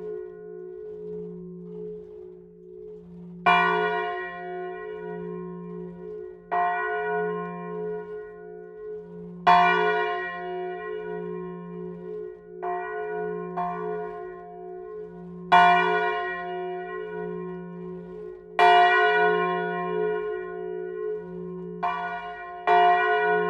Rte de l'Église Saint-Martin, Montabard, France - Montabard - Église St-Martin
Montabard (Orne)
Église St-Martin
La Volée
France métropolitaine, France